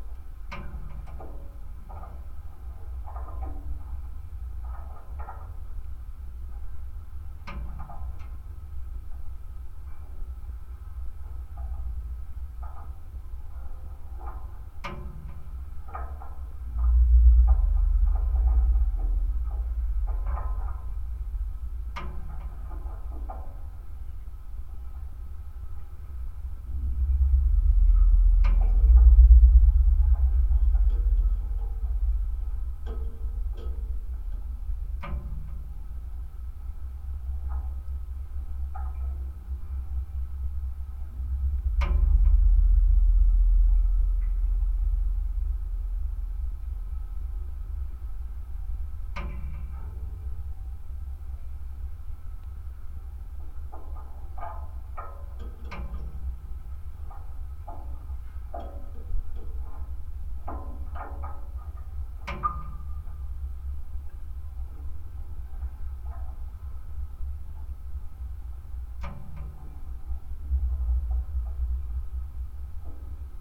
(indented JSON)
{
  "title": "Ąžuolija, Lithuania, metalic stairs in abandoned watertower",
  "date": "2018-09-01 15:20:00",
  "description": "abandoned watertower not so far from railway station. contact microphones on the metalic stairways inside the tower",
  "latitude": "55.45",
  "longitude": "25.57",
  "altitude": "136",
  "timezone": "GMT+1"
}